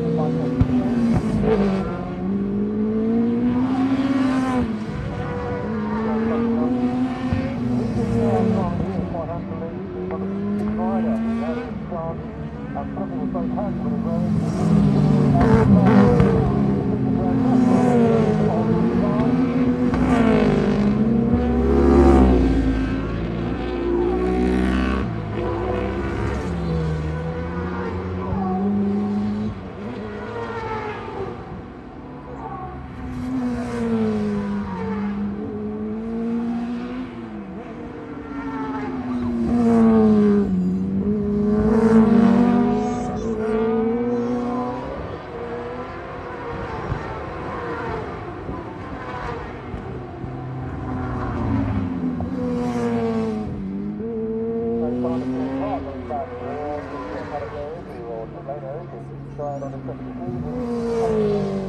Unnamed Road, Louth, UK - british superbikes 2002 ... superbikes ...
british superbikes 2002 ... cadwell park ... superbikes qualifying ... one point stereo mic to minidisk ... correct date ... time not ...